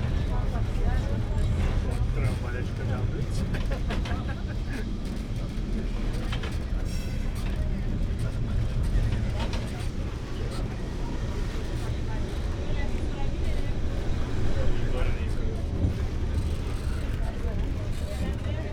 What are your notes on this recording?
Lisbon, old town near Castelo San Jorge, on tram Elétrico 28E (Sony PCM D50, DPA4060)